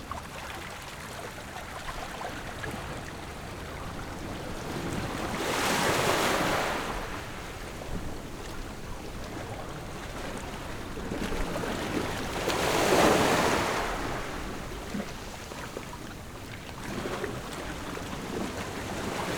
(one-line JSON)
{"title": "Jizanmilek, Koto island - Sound of the waves", "date": "2014-10-29 13:31:00", "description": "Sound of the waves\nZoom H6 +Rode NT4", "latitude": "22.06", "longitude": "121.57", "altitude": "9", "timezone": "Asia/Taipei"}